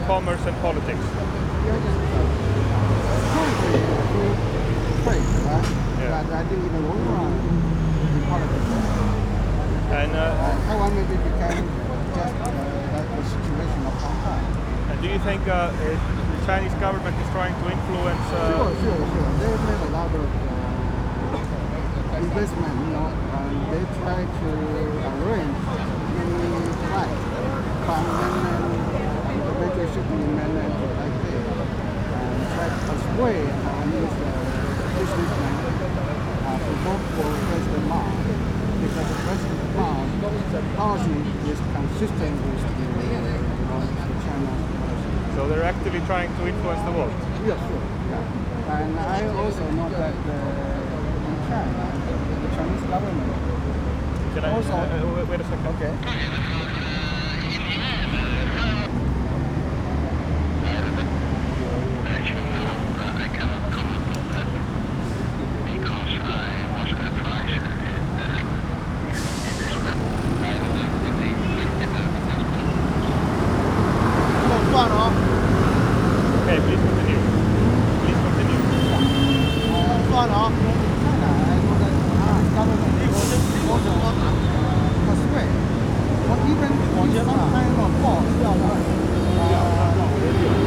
Sec., Jianguo S. Rd., Da’an Dist. - the corner of the road
Traffic Sound, In the corner of the road, Reporters interviewed, Election Parade
Zoom H4n + Rode NT4
13 January, 11:59